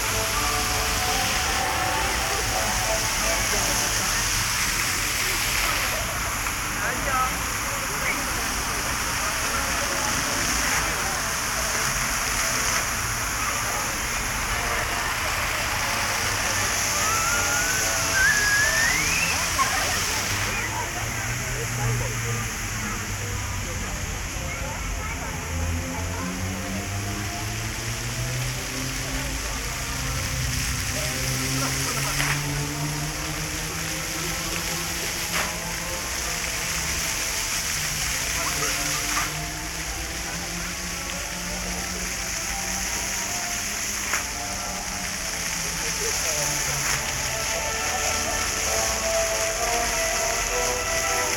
Fountains at Krynica-Zdrój, Polska - (648 BI) walk around fountains and further
Walk around fountains and further down the park.
Recorded with DPA 4560 on Sound Devices MixPre6 II.